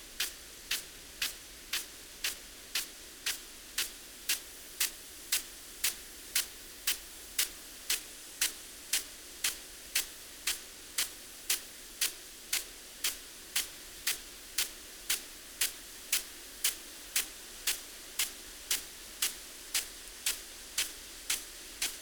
field irrigation system ... parabolic ... Bauer SR 140 ultra sprinkler ... to Bauer Rainstar E irrigation unit ... standing next to the sprinkler ... bless ...